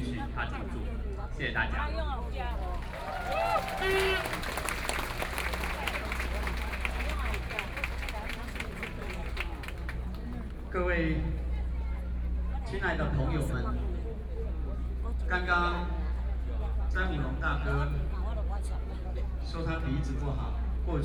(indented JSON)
{
  "title": "Ketagalan Boulevard, Taipei, Taiwan - Protest",
  "date": "2013-08-18 17:52:00",
  "description": "Protest, Sony PCM D50 + Soundman OKM II",
  "latitude": "25.04",
  "longitude": "121.52",
  "altitude": "8",
  "timezone": "Asia/Taipei"
}